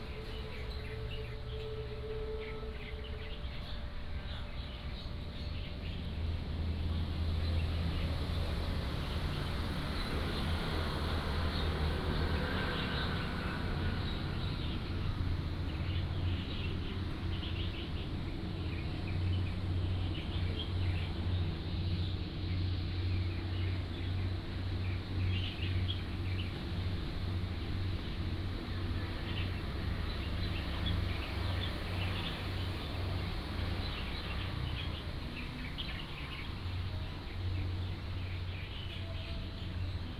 本福村, Liuqiu Township - Waterfront Park
Waterfront Park, Birds singing, Traffic Sound, A distant ship whistle
Pingtung County, Taiwan, 1 November 2014